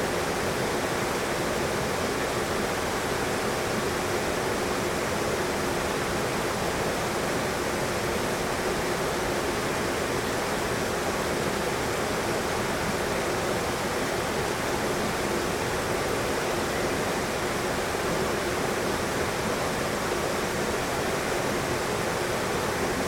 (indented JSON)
{
  "title": "Mt Tamalpais drainpipe, Marin CA",
  "description": "white noise of creek sounds recorded in a large drain pipe",
  "latitude": "37.91",
  "longitude": "-122.58",
  "altitude": "210",
  "timezone": "Europe/Tallinn"
}